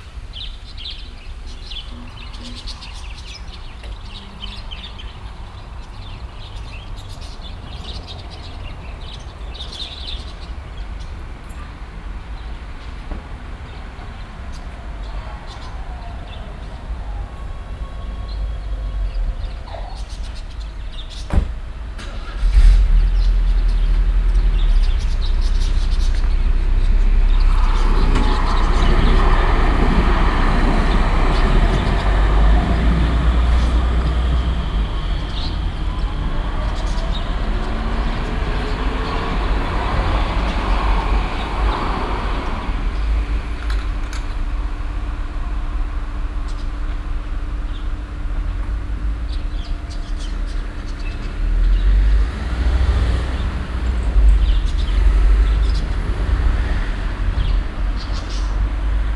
refrath, siegenstrasse, vogelbauer
morgens an grossvogelgehege nahe bahnstation, ein pkw startet und fährt vorbei, eine bahn fährt ein
soundmap nrw - social ambiences - sound in public spaces - in & outdoor nearfield recordings